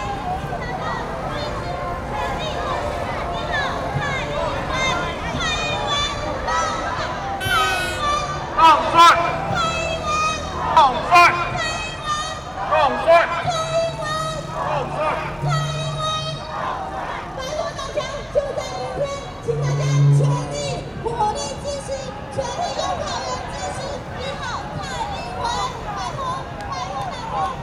Sec., Zhongxiao E. Rd., Taipei City - Election Parade
Traffic Sound, Election Parade
Zoom H4n + Rode NT4
Taipei City, Taiwan, 13 January, 12:39